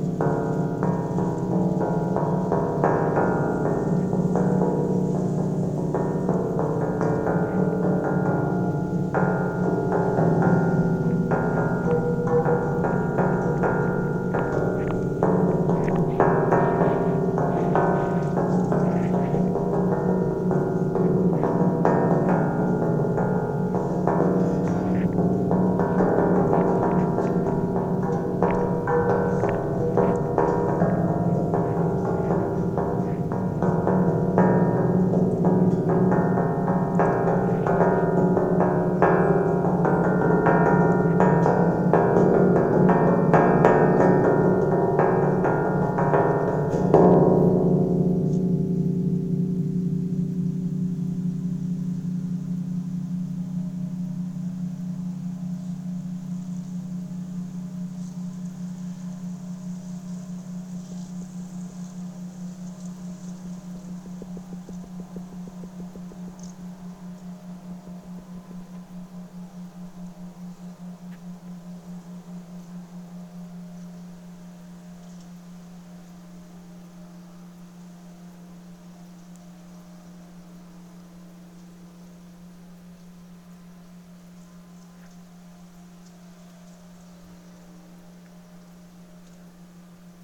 Tallinn, Baltijaam sculpture interaction - Tallinn, Baltijaam sculpture interaction (recorded w/ kessu karu)
hidden sounds, interaction with a giant spring sculpture outside Tallinns main train station.
Tallinn, Estonia, April 2011